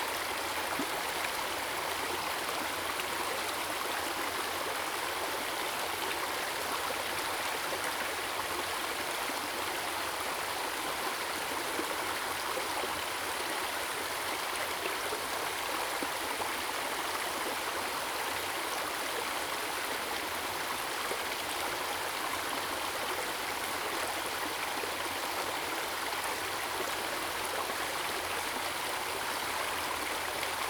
中路坑溪, 桃米里 Puli Township - Brook
Brook
Zoom H2n MS+XY
Puli Township, 投68鄉道73號, 13 July, 07:41